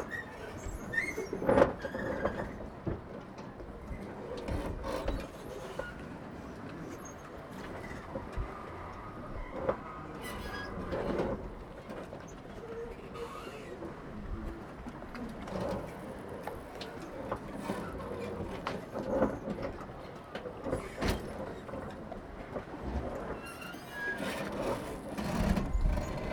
Le Port, Cassis, France - Moored boats
This was taken on a floating jetty. At the time there were a lot of tourists so the harbour was very busy and noisy, and there was nowhere to sit. I sat on the floating jetty where the sounds of the boats hitting and scraping against each other and their moorings blocked out most of the other sounds.
Recorded with ZOOM H1, end of the recording was cut due to noise from the wind.